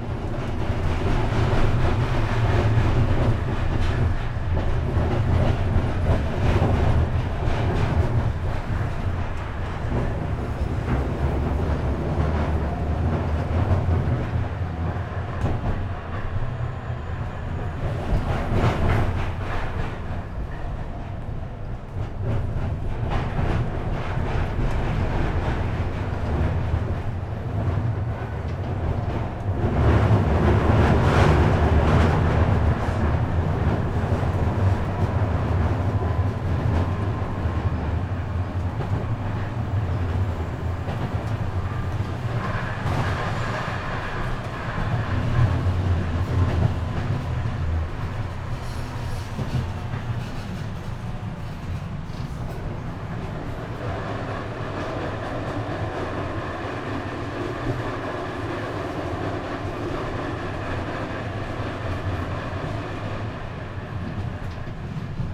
Poznan, Wild district - tram line 8
riding two stops on an old, rattling tram towards Lazarz district. (sony d50)